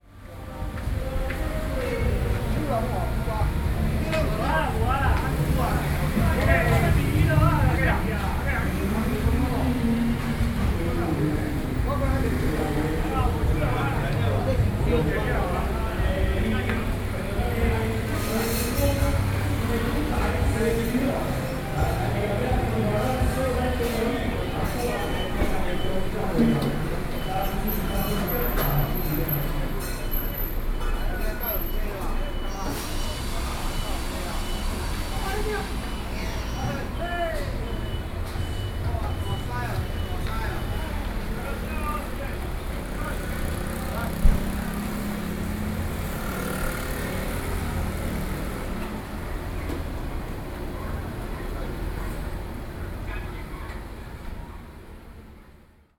Beitou - The corner
The corner of people are preparing food
Beitou District, Taipei City, Taiwan, October 2012